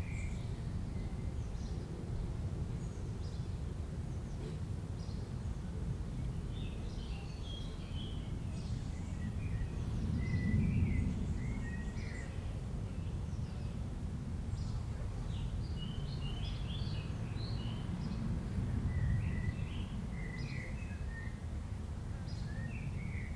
Lord's Bushes. Epping Forest
Birds, insects and various aircraft. Recorded on a Zoom H2n.